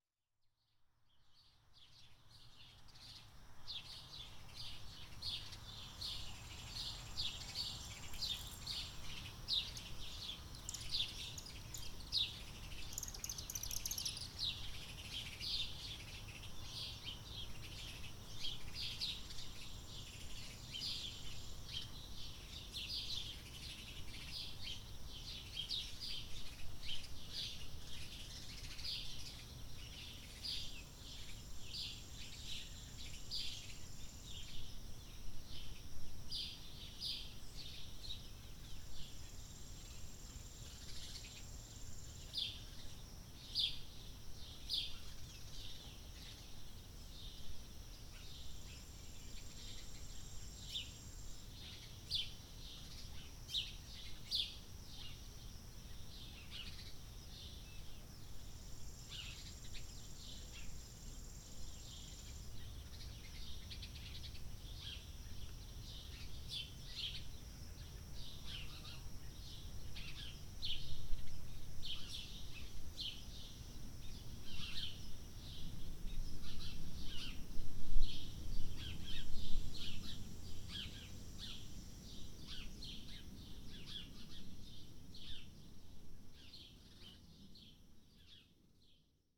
Early morning, Frauhiger Homestead Farm, Wells County, IN. Recorded at an Arts in the Parks Soundscape workshop sponsored by the Indiana Arts Commission and the Indiana Department of Natural Resources.
Indiana, USA